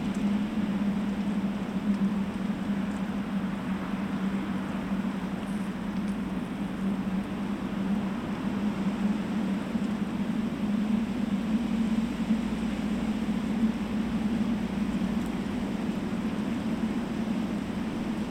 Utena, Lithuania, wind in cell tower
strong wind playing in cell tower